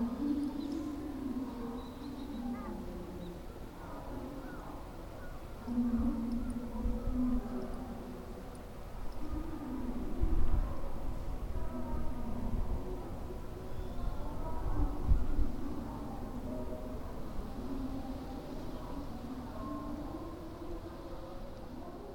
A fourth infiltration tunnel was discovered on 3 March 1990, north of Haean town in the former Punchbowl battlefield. The tunnel's dimensions are 2 by 2 m (7 by 7 feet), and it is 145 metres (476 ft) deep.
1. Water dripping within the tunnel 2. Narrow gauge railway cart inside the tunnel 3. Propaganda broadcast heard braodcasting from North Korea into the Punchbowl Valley